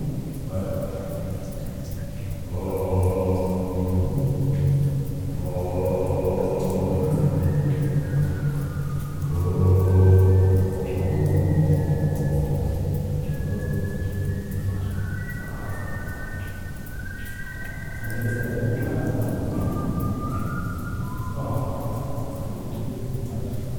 Valbonnais, France - Valbonnais mine
Resonance in the Valbonnais cement underground mine. Friends are walking more and more far. It's becoming hard to understand them because of the underground cathedral reverberation.
24 May